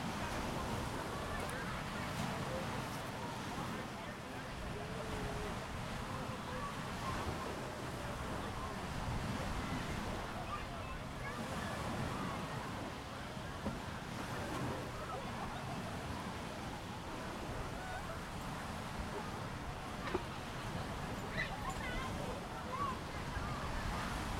Van Buren State Park, South Haven, Michigan, USA - Van Buren Beach
Ambient recording at Van Buren State Park Beach.
Michigan, United States, 23 July 2021, ~15:00